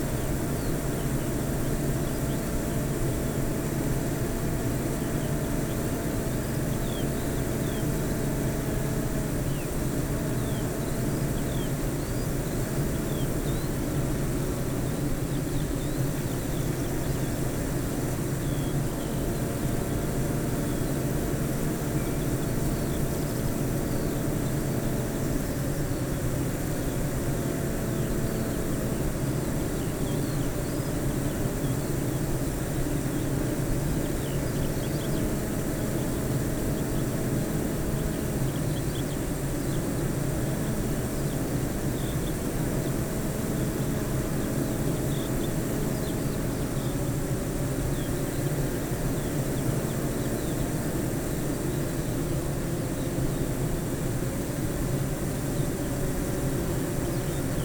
Green Ln, Malton, UK - bee hives ...
bee hives ... eight bee hives in pairs ... dpa 4060s to Zoom F6 clipped to a bag ... bird call song ... skylark ... corn bunting ...